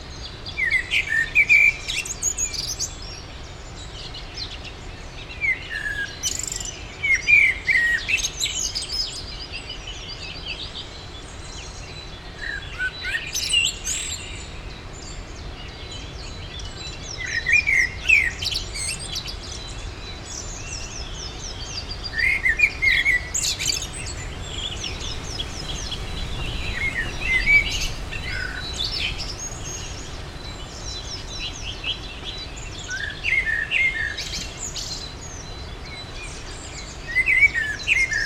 V Rokli, Radčice, Liberec, Česko - Dawn chorus
Early morning in the garden on the slopes of Jizera Mountains.
Severovýchod, Česko